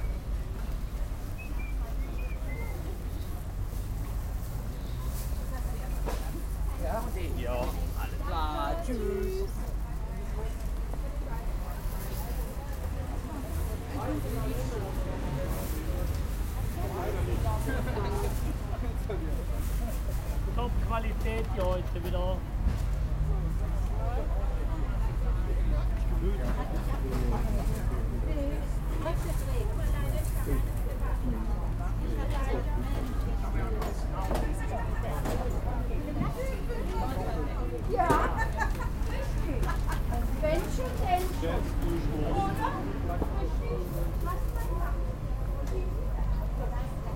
weekly market - Köln, weekly market
friday market near "Apostelnkirche", may 30, 2008. - project: "hasenbrot - a private sound diary"